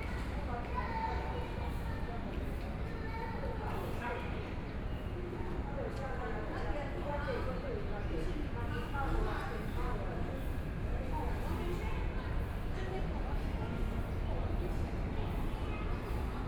Chat with a group of elderly, Kids game sound, The distant sound of the MRT train, Aircraft flying through, Traffic Noise, Binaural recordings, Sony Pcm d50+ Soundman OKM II
Taipei City, Taiwan